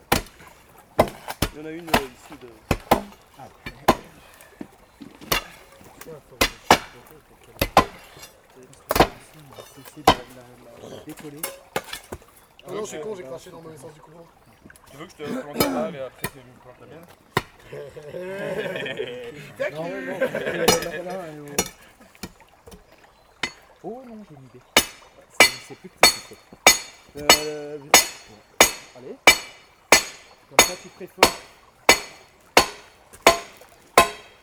Court-St.-Étienne, Belgique - Scouting
One of the citizen acts of the scouts on this "green day", as they call it, was to repair a broken bridge in a small river called Ry d'Hez. This broken bridge was doing lot of problems, as in first obstructions in the river. On this morning, adult scouts try to break the concrete bridge, dating from the fifties. It's a very difficult work, as no machines can come in this isolate place. All work is done with crowbars. Scouts are courageous.
Court-St.-Étienne, Belgium, 16 April 2016, ~11:00